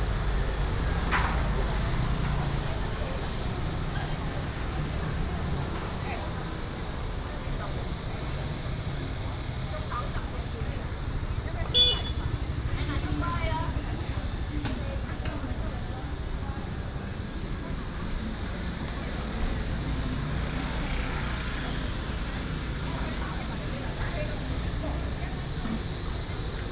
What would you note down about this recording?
dusk market, Cicada sound, Traffic sound